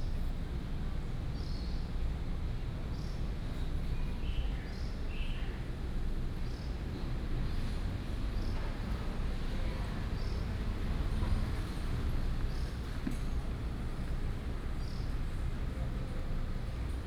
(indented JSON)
{
  "title": "龍生公園, Da'an District - Birds and the Park",
  "date": "2015-06-28 18:17:00",
  "description": "In the park, children, Bird calls, Very hot weather",
  "latitude": "25.03",
  "longitude": "121.54",
  "altitude": "19",
  "timezone": "Asia/Taipei"
}